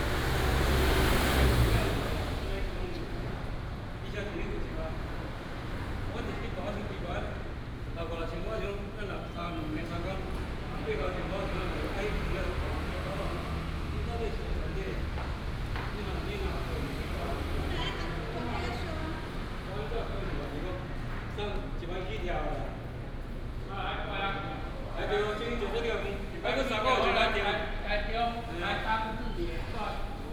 大進市場, Nantun Dist., Taichung City - In the Market
In the Market, Dog sounds
Taichung City, Taiwan, 22 March 2017, 11:47